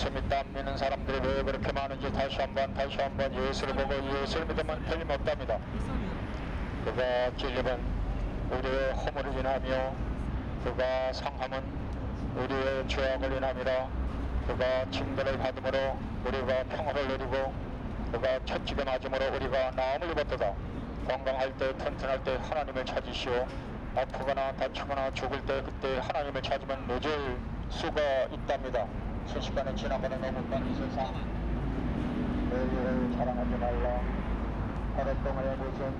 대한민국 서울특별시 강남역 - Gangnam Station, A Preacher on the Road
Gangnam Station, A Preacher on the Road
강남역, 길거리 전도사
서초구, 서울, 대한민국, October 2019